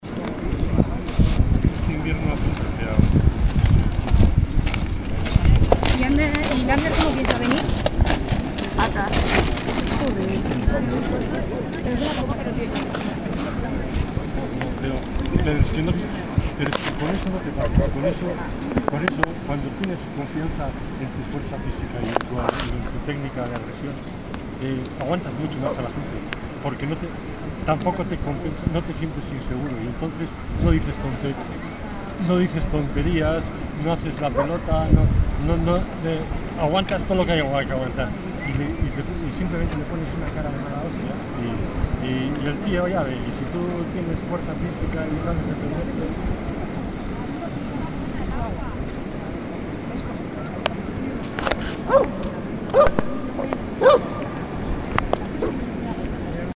Meñakotz (basque country)
2009/7/21. 13:57h. Meñakotz. Some stupid conversations on a summer day in the beach.